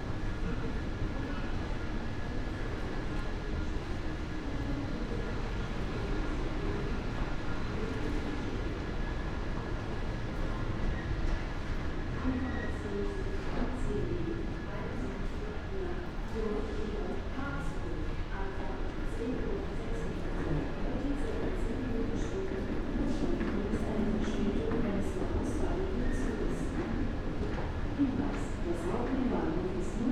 Mannheim Hauptbahnhof, Deutschland - main station walking
ideling at Mannheim Haupbahnhof, waiting for a connecting train to Salzburg, strolling around shopiing areas, pedestrian underpass and so on
(Sony PCM D50, Primo EM172)